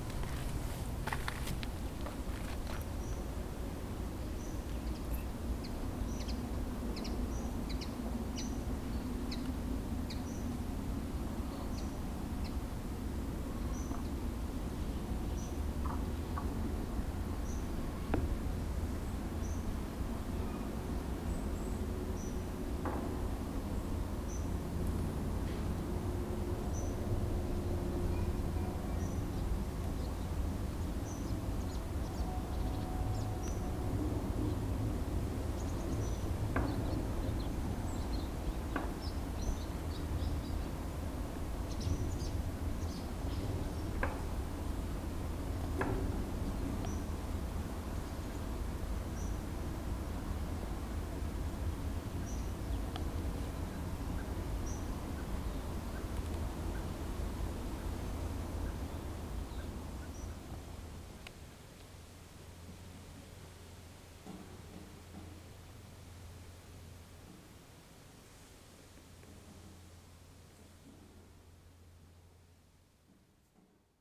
Evangelický kostel, Šonov u Nového Města nad Metují, Provodov-Šonov, Czechia - nahrávka u vstupu do kostela (ambient)
Nahrávka u vstupu do evangelického kostela ve Václavicích.
během festivalu Ars Poetica 2022
Severovýchod, Česko